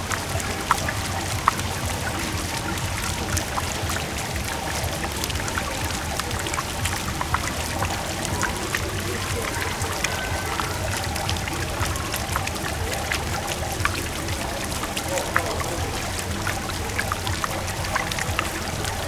The sound of the water of the fountain in the main square in Nova Gorica.